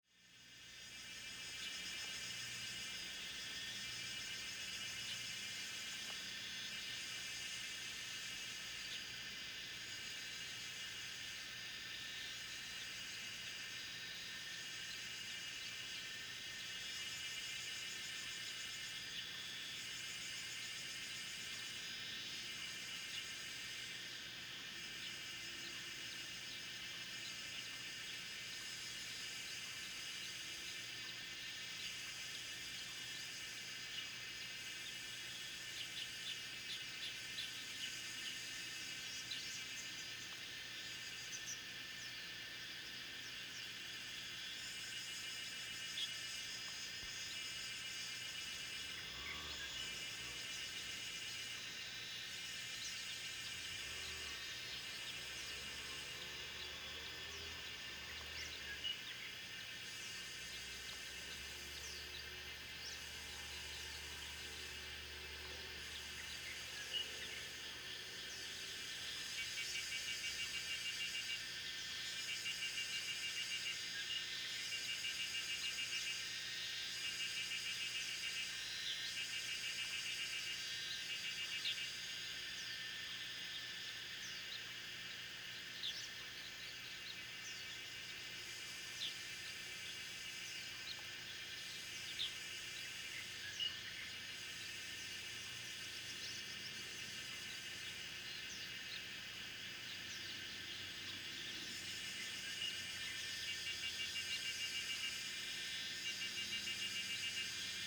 種瓜路, 桃米里 Nantou County - Cicada and Bird sounds
Cicada sounds, Bird sounds
Zoom H2n Spatial audio
6 June 2016, 17:37